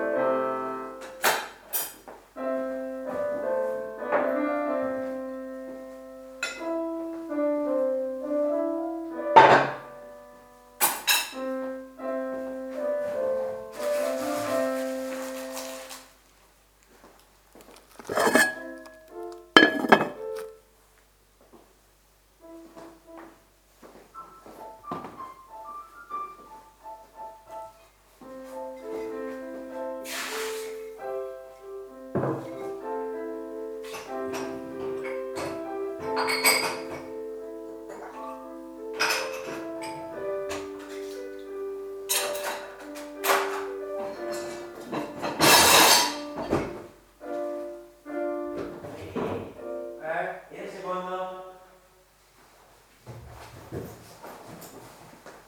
Pavia, Italy - Dishes and piano
Sound of someone exercising piano on the backroung, someone else putting in order the kitchen on the foreground.